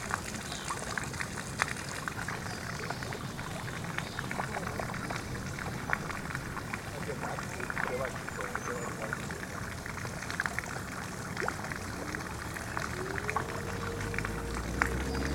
Bayreuth, Deutschland, Eremitage - Eremitage
Little fountain at the "Erimitage Kanalgarten"